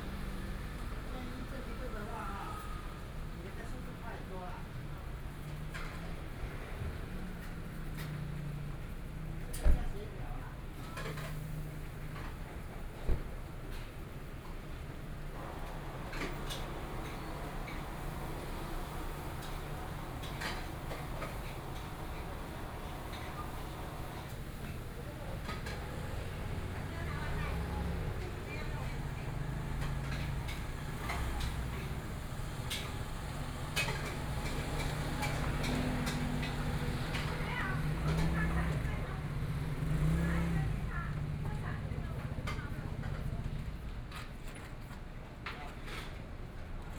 Guangming Rd., Taitung City - Outside the restaurant
Traffic Sound, Kitchen cooking sounds, Binaural recordings, Zoom H4n+ Soundman OKM II
Taitung City, Taitung County, Taiwan, 15 January 2014